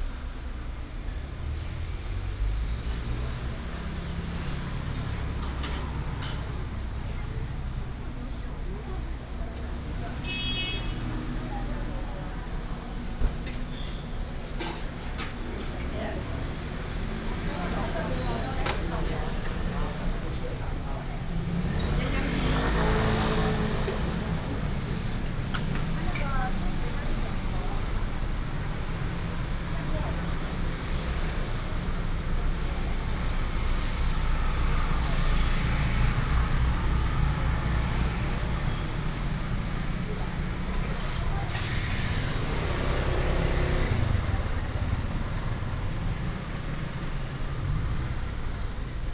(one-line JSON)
{"title": "Minquan St., Hukou Township - walking in the Street", "date": "2017-01-18 11:15:00", "description": "walking in the Street, Traffic sound, Various street vendors", "latitude": "24.90", "longitude": "121.05", "altitude": "86", "timezone": "GMT+1"}